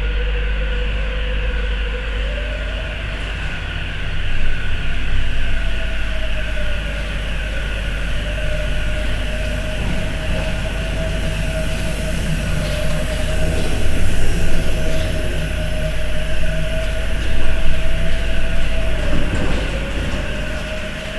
Düsseldorf, parking garage, alarm
Inside an inner city parking garage. The sound of a constant alarm signal and a service wagon passing by.
soundmap d - social ambiences and topographic field recordings
Düsseldorf, Germany